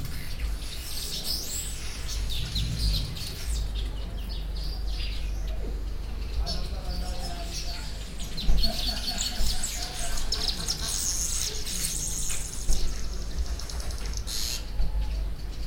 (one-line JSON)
{"title": "Tusimpe dorm, Binga, Zimbabwe - birds in the mnemu trees...", "date": "2016-10-22 09:09:00", "description": "...morning sounds in front of my window… weaver birds in the mnemu trees, sounds from my brothers at the kitchen getting in to swing, school kids still passing by on the path along the fence ...", "latitude": "-17.63", "longitude": "27.33", "altitude": "605", "timezone": "GMT+1"}